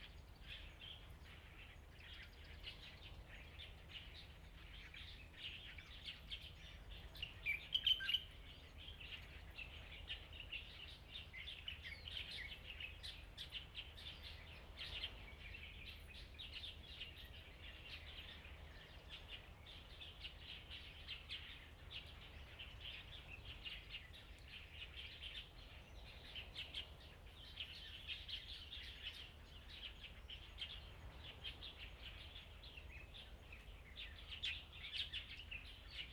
林投村, Huxi Township - Birds singing
Birds singing, In the park, In the woods
Zoom H2n MS +XY
Penghu County, Husi Township, 澎20鄉道, 21 October 2014, 08:44